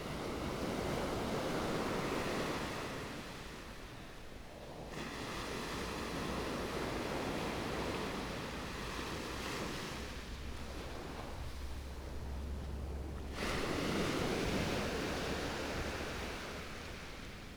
Beibin Park, Hualien City - Sound waves
Sound waves
Please turn up the volume
Binaural recordings, Zoom H4n+ Soundman OKM II + Rode NT4